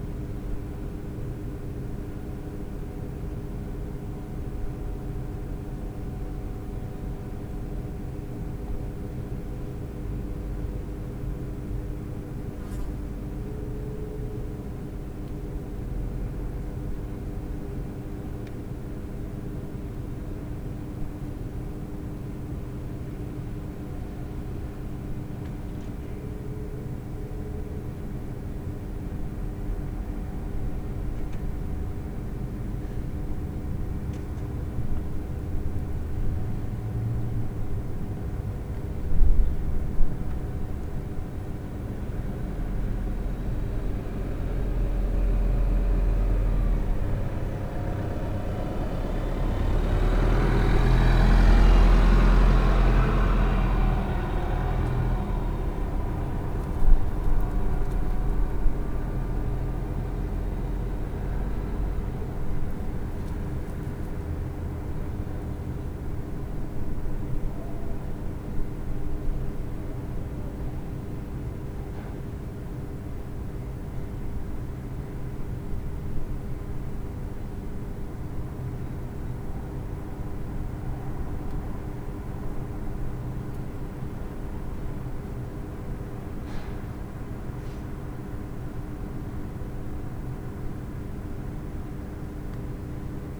Lądowisko przy USK we Wrocławiu, Borowska, Wrocław, Polska - Covid-19 Pandemia
Uniwersytecki Szpital Kliniczny im. Jana Mikulicza-Radeckiego we Wrocławiu
12 April, ~15:00